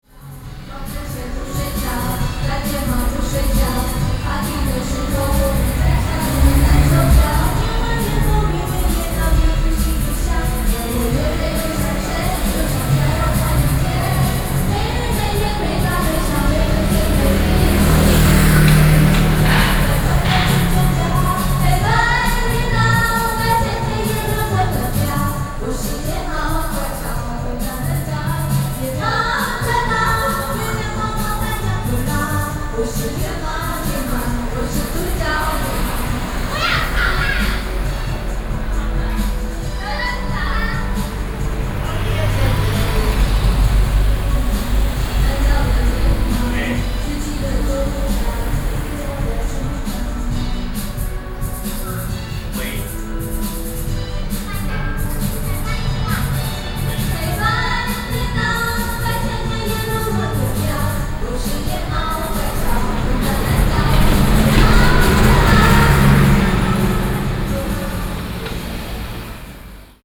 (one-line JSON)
{"title": "Zhongzheng District, Keelung - singing", "date": "2012-06-24 14:41:00", "description": "Two girls are singing, Sony PCM D50 + Soundman OKM II", "latitude": "25.15", "longitude": "121.77", "altitude": "12", "timezone": "Asia/Taipei"}